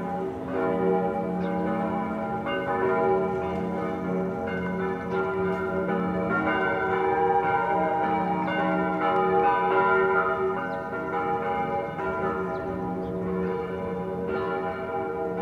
Sunday, March 22,2020, 6 pm - there was a call to perform "Ode to Joy" together, from the balconies and window, in Corona times. I was curious to hear whether it would happen.
It didn't. Not here at least. The church bells were there, as usual on a Sunday evening. The first bell got nicely mixed with two kids on their way home playing with a basket ball.
One short attempt on an accordion.
In times of closed EU borders, refugees kept outside, in camps, it would be better, as someone suggested, to perform The International, or whatever, but not the European hymn. imho
Recorded on a Sony PCM D100 from my balcony again.
Deutschland, 22 March 2020